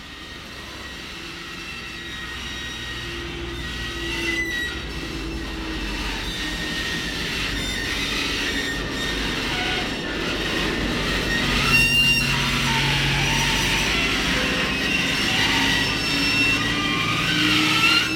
Yerevan, Arménie - Merzbow like billboard
On the big Sayat Nova avenue, there's a billboard. As it's ramshackle, it produces some Merzbow like music. Not especially an ASMR sound !